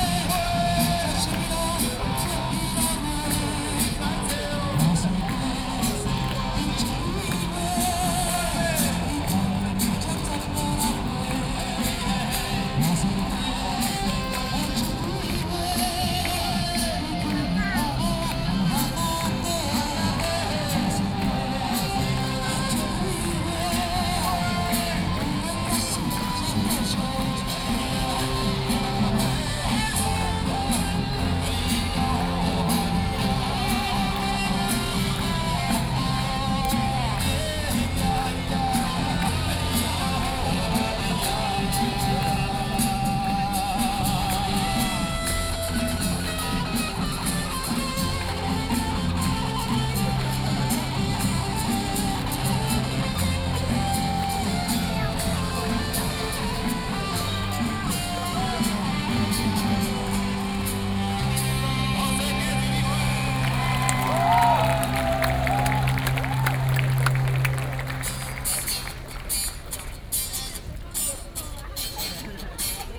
Liberty Square, Taipei - Taiwanese singer
Opposed to nuclear power plant construction, Sony PCM D50 + Soundman OKM II
台北市 (Taipei City), 中華民國